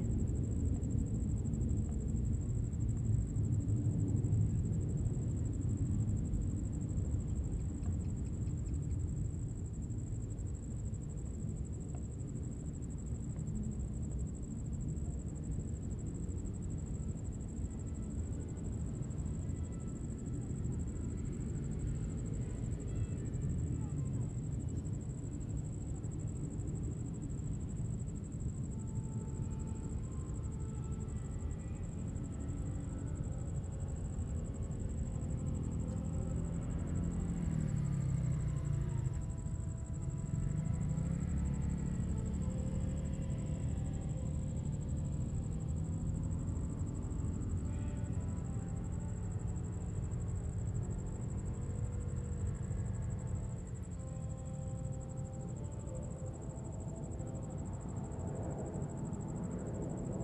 Waterfront Park, Beach at night, The sound of aircraft flying
Zoom H2n MS + XY
4 September 2014, ~20:00